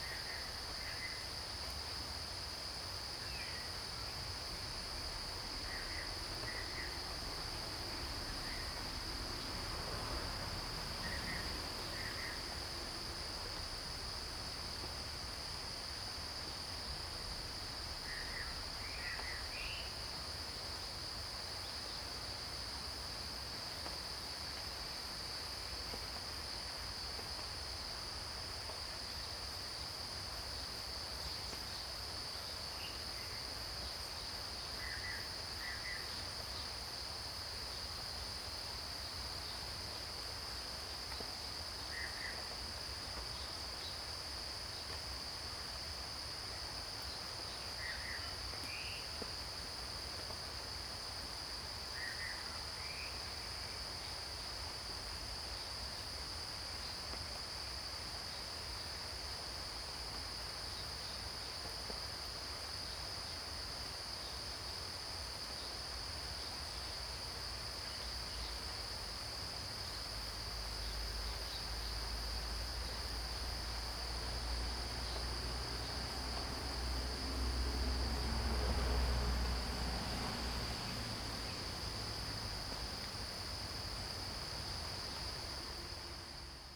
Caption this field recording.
Cicada sounds, Bird calls, Zoom H2n MS+XY